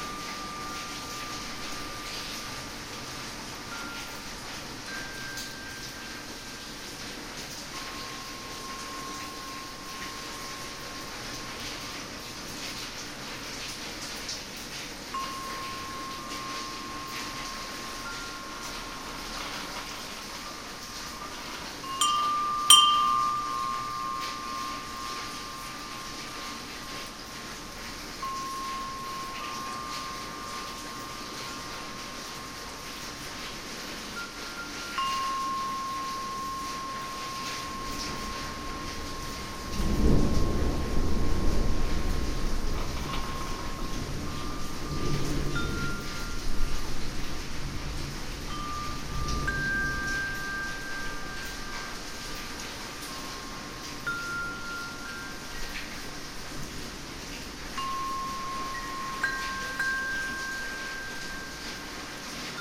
selva, carrer de noblesa, thunderstorm, rain & wind chimes
thunderstorm in the evening, the wind and the rain play with two wind chimes
soundmap international: social ambiences/ listen to the people in & outdoor topographic field recordings